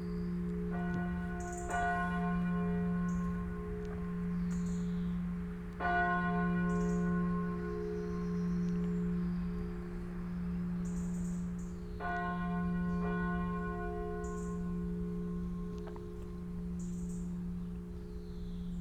St.Lubentius, Dietkirchen - church bells
evening church bells of St.Lubentius basilica
(Sony PCM D50, DPA4060)